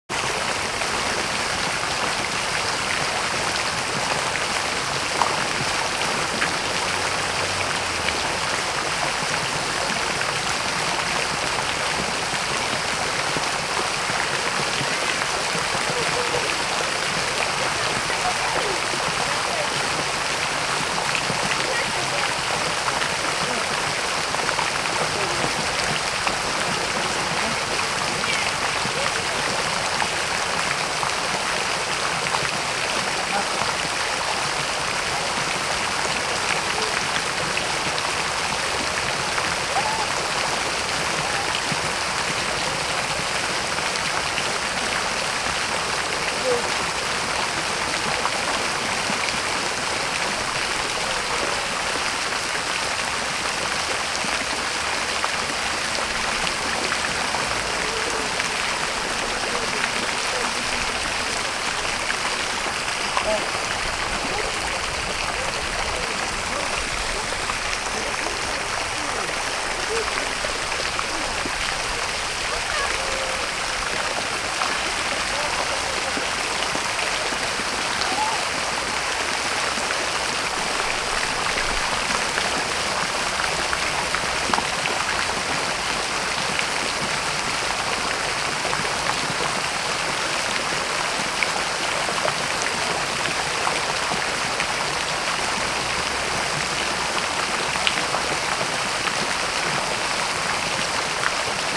Śródmieście Północne, Warszawa - Fontanna Teatr Lalka
Fontanna Teatr Lalka w Pałac Kultury i Nauki, Warszawa